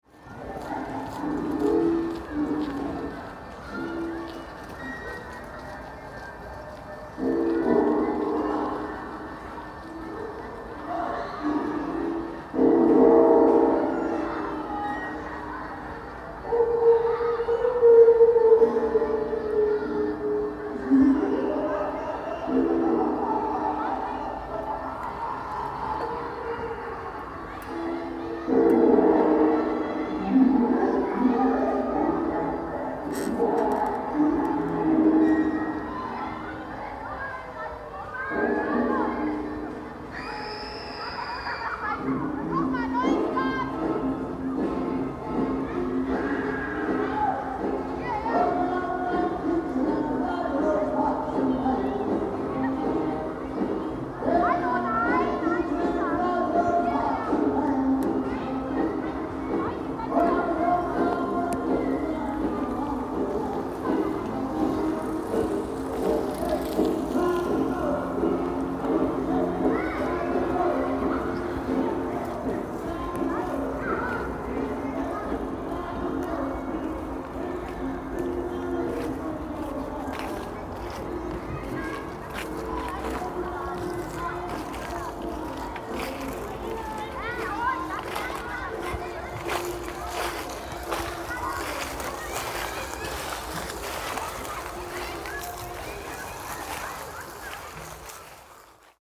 Weird sounds and a scream: School film show
Standing outside the school hall where a film is being shown
Berlin, Germany, 1 November, 17:21